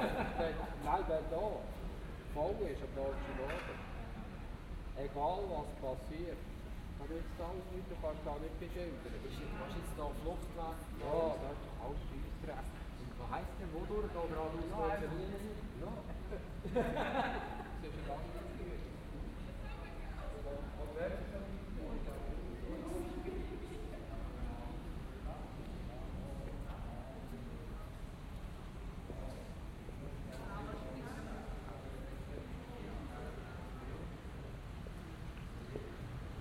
Walk through the nightly streets of the pedestrian zones of Aarau, not very many people left
Aarau, Switzerland, 28 June 2016